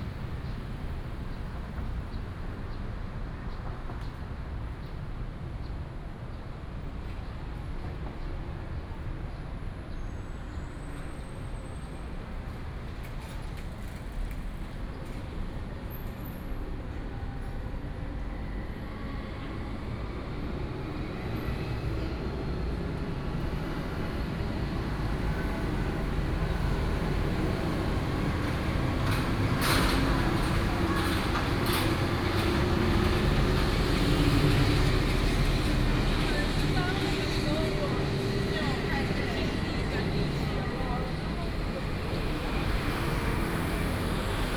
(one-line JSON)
{"title": "Lane, Sec., Heping E. Rd. - Walking in a alley", "date": "2015-06-28 18:08:00", "description": "Walking in a small alley, Traffic Sound, Hot weather, Air conditioning, sound", "latitude": "25.02", "longitude": "121.54", "altitude": "23", "timezone": "Asia/Taipei"}